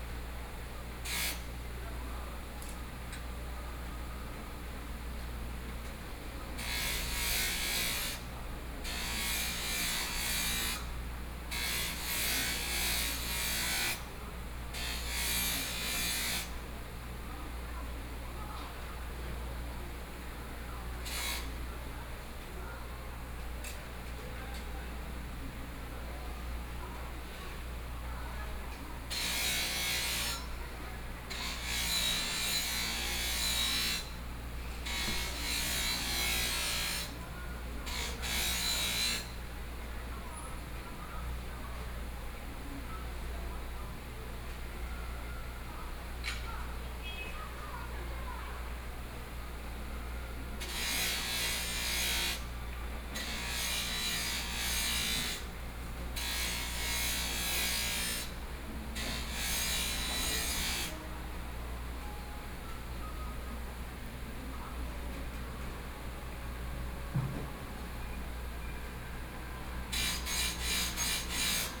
Yilan City, Taiwan - Knife grinding sound
Knife grinding sound, Binaural recordings, Zoom H4n+ Soundman OKM II